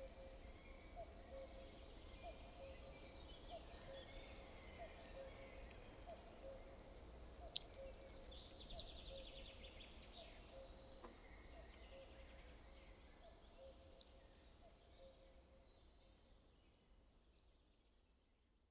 recorded with omni mics and electromagnetic antenna. cuckoo and sferics.